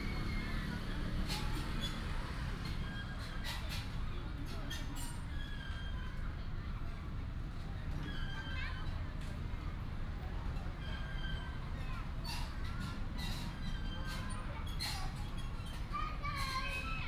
東山街孔廟廣場, Hsinchu City - Childrens play area
Childrens play area, in the park, traffic sound, Binaural recordings, Sony PCM D100+ Soundman OKM II
6 October 2017, ~18:00